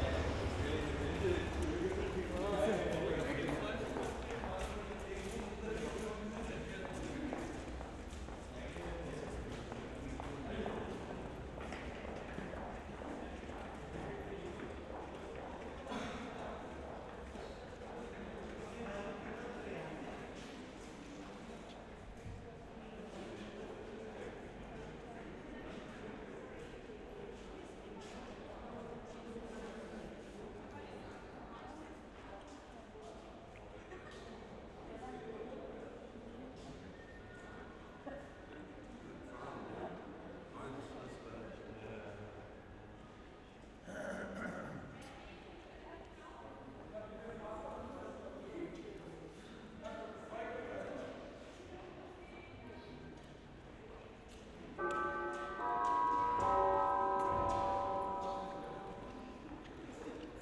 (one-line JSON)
{"title": "Gleisdreieck, Kreuzberg, Berlin - saturday night station ambience", "date": "2012-03-24 22:25:00", "description": "station ambience at Gleisdreieck on a saturday night. the whole area around Gleisdreieck has been a wasteland for decades and is now transforming rapidely into a leisure and recreation area.", "latitude": "52.50", "longitude": "13.37", "altitude": "36", "timezone": "Europe/Berlin"}